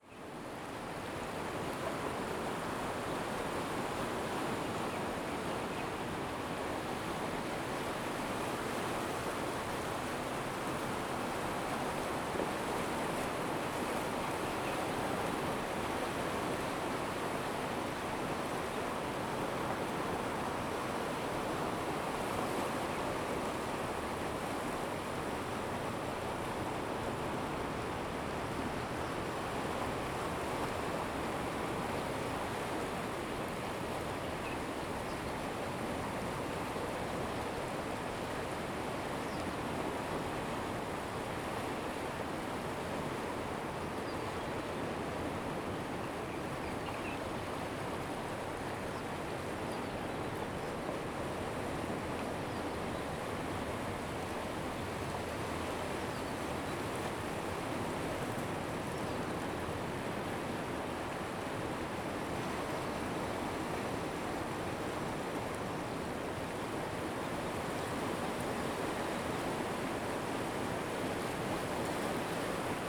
On the coast, Aircraft flying through, Sound of the waves
Zoom H2n MS+XY + H6 XY
New Taipei City, Tamsui District, 15 April 2016, 09:11